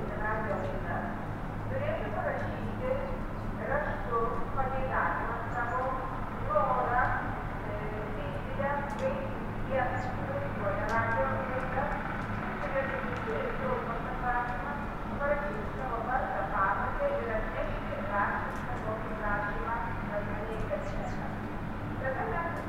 Lithuania, Vilnius, a school radio
some near school radio translation heard in abandoned building in forest
5 November, ~13:00